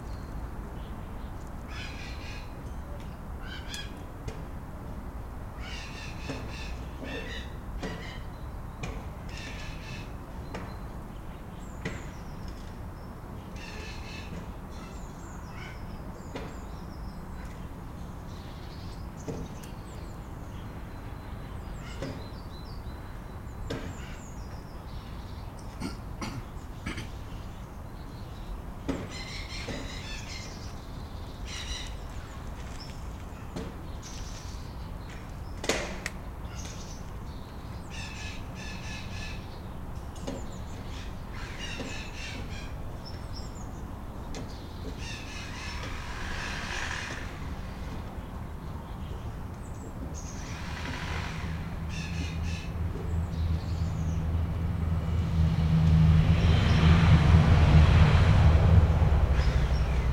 leipzig alt-lindenau, gartenanlage die quecke, morgens um 8
gartenanlage die quecke frühs um 8. man hört vögel, handwerker in der ferne, zum schluss einen zug hinter der gartenanlage in richtung bahnhof lindenau fahrend.